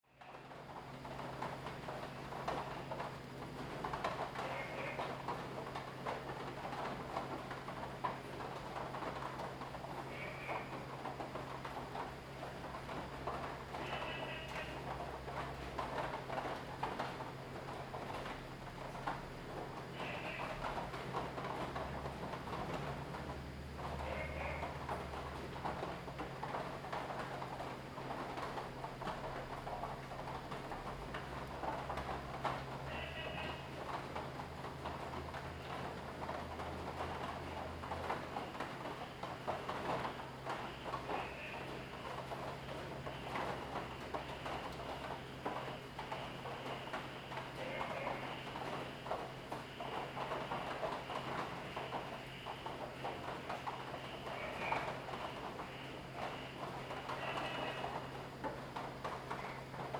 Woody House, 埔里鎮桃米里 - Inside the restaurant
Rainy Day, Inside the restaurant, Frog calls
Zoom H2n MS+XY